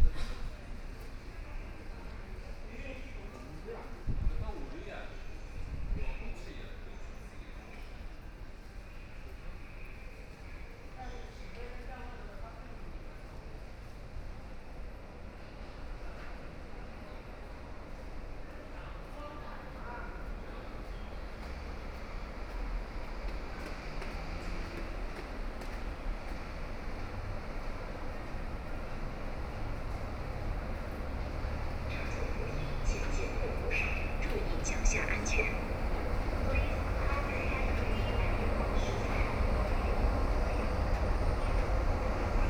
Siping Road Station, Shanghai - walking in the station
walking in the station, Siping Road station, To exit from the station platform to the upper, Binaural recording, Zoom H6+ Soundman OKM II
Yangpu, Shanghai, China, 23 November 2013